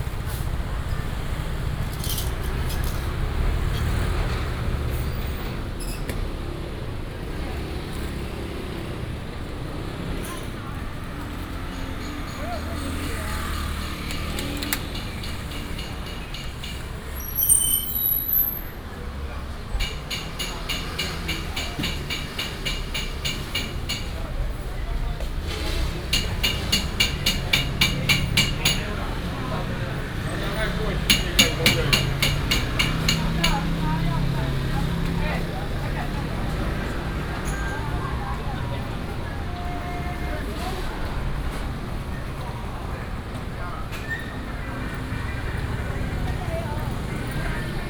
Zhongzheng Rd., Hukou Township - Walking on the road
Walking on the road, Through the market, Traffic sound